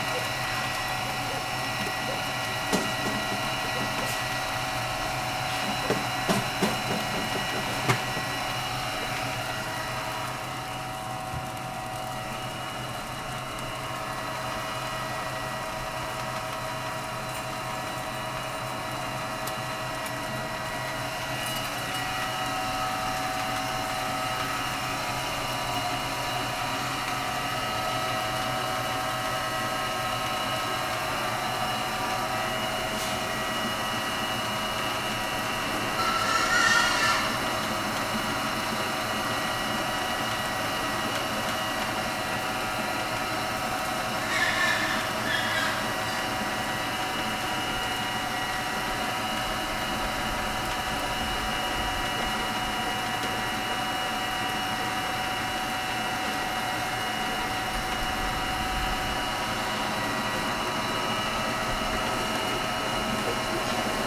Fangyuan Township, Changhua County - in the Pig workshop
in the Pig workshop, Dogs barking, Feed delivery piping voice, Zoom H6